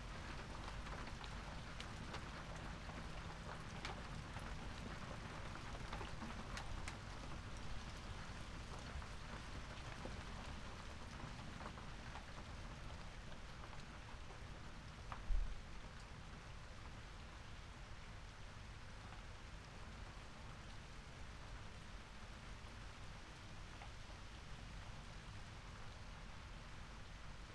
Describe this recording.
thunderstorm at distance ... SASS on a tripod ... bird calls ... wing beats ... from starling ... wood pigeon ... collared dove ... blackbird ... background noise ... traffic ... voices ... donkey braying ... car / house alarm ... and then the rain arrives ...